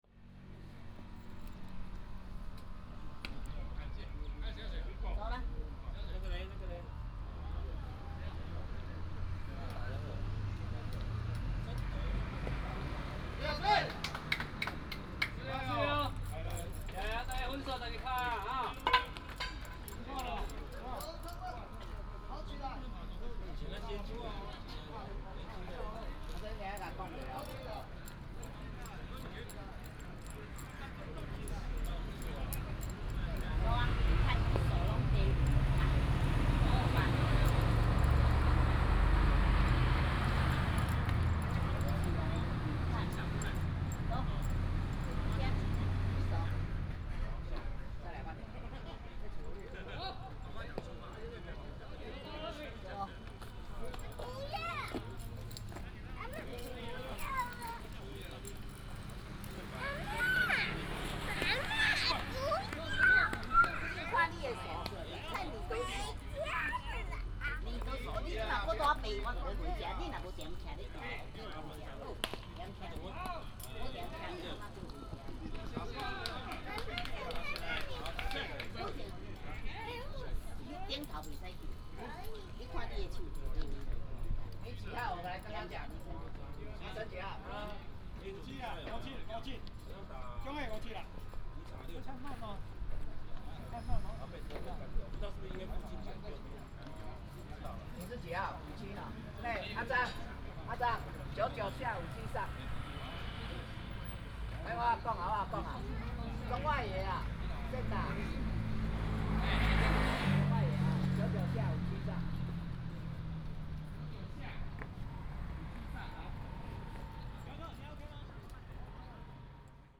八德棒壘場, Bade Dist., Taoyuan City - Playing softball
Next to the stadium, Playing softball, Traffic sound